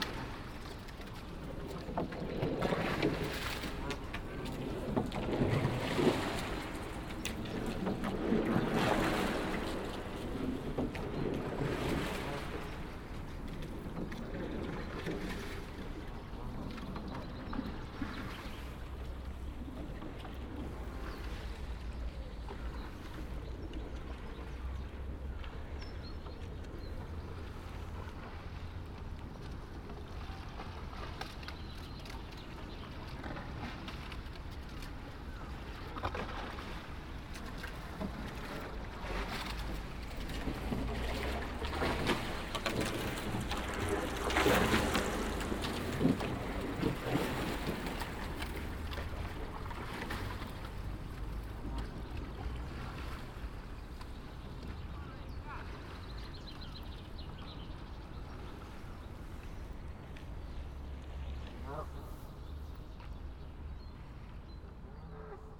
Rowers on the River Thames passing under the railway bridge (The brick bridge was designed by Isambard Kingdom Brunel and is commonly referred to as 'The Sounding Arch' due to its' distinctive echo).
Buckinghamshire, UK, 5 March